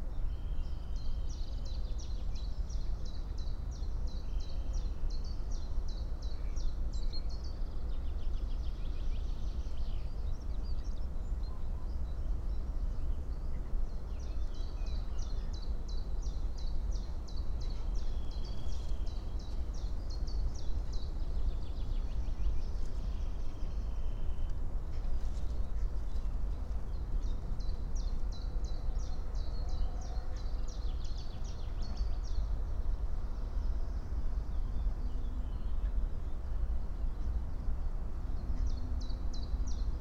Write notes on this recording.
11:05 Berlin Buch, Lietzengraben - wetland ambience